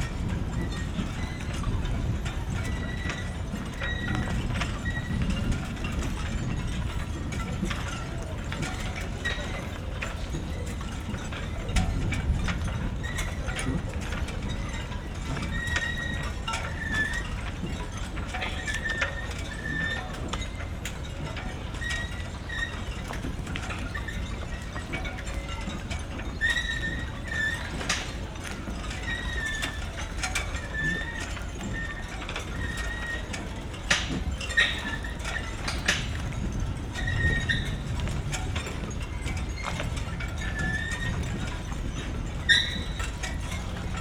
Wannsee Berlin, marina, sailing boats, ringing rigs, a thunderstorm is approaching
(SD702, Audio Technica BP4025)